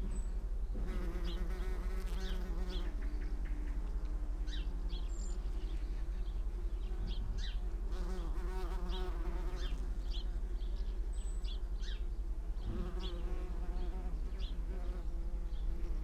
Chapel Fields, Helperthorpe, Malton, UK - bees on lavender ...
bees on lavender ... xlr sass skyward facing to zoom h5 ... between two lavender bushes ... unattended time edited extended recording ... bird calls ... song ... from ... dunnock ... coal tit ... wood pigeon ... swallow ... wren ... collared dove ... blackbird ... house sparrow ... house martin ... blue tit ... goldfinch ... linnet ... plenty of traffic noise ...
8 July 2022, 09:30